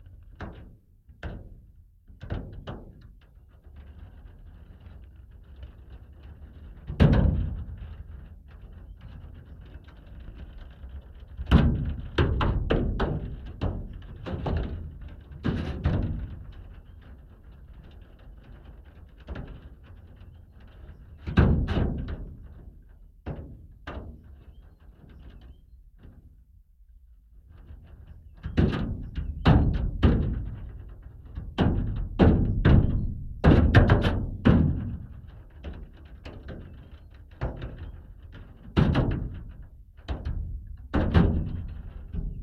Šlavantai, Lithuania - Flies on a protective aluminium mesh
Dual contact microphone recording of a few flies stuck between a closed window and a protective anti-insect aluminium mesh, crawling and bouncing.
8 June, 13:00